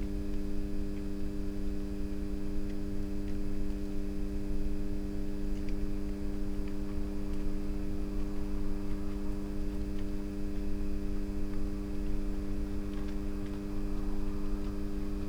quiet village of Bordeira at night, near by a power pole, electric hum (Sony PCM D50, Primo EM172)
Portugal, 28 October 2017